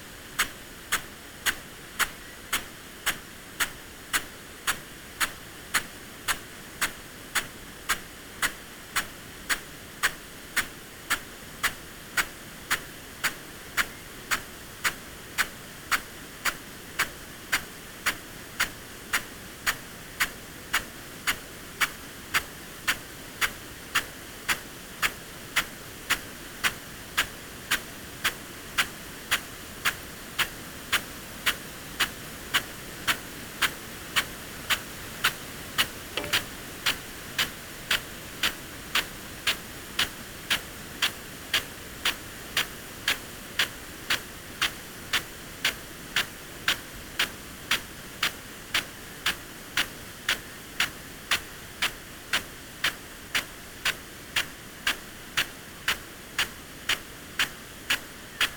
Green Ln, Malton, UK - field irrigation system ...
field irrigation system ... parabolic ... Bauer SR 140 ultra sprinkler to Bauer Rainstart E irrigation unit ... standing next to the sprinkler unit ...